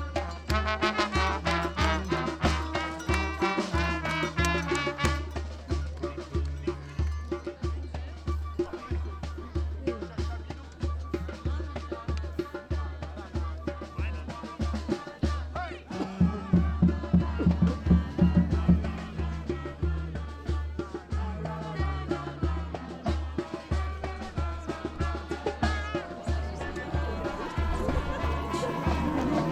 Roztoky, Česká republika - Masopust
Rather new, or recovered tradition of the Carnival celebration happens annually Saturday before the Ash Tuesday as a join venture between Prague districts Roztoky and Únětice. Sometimes almost 2000 people in masks and with live music gather and join the procession, starting from the village of Roztoky and the other from Únětice. Finally there is a perfomative meeting at Holý vrch with dance and music and both then all continues to a party with live music in Kravín pub.
Roztoky, Czech Republic